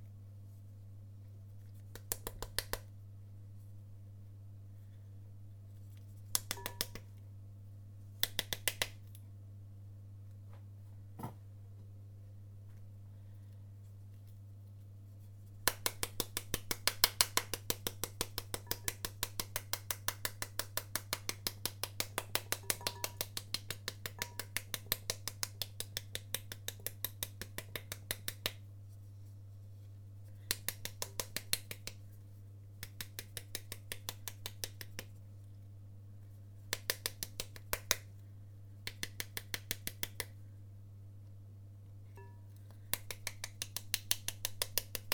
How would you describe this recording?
I am currently knitting a swatch based on pomegranates and have been buying these fruits in order to study them for my knitterly research. They can be time-consuming to prepare if you want to include them in a salad, and most folks recommend that you cut them in half and spank them with a wooden spoon in order to extract the seeds. I have been enjoying finding the best technique for this; if you thrash the pomegranate too hard it falls to bits, but you do need to be a bit firm in order to knock the seeds out. This sound recording features my perfected pomegranate-spanking technique. I had a very tasty fruit salad following this light culinary violence.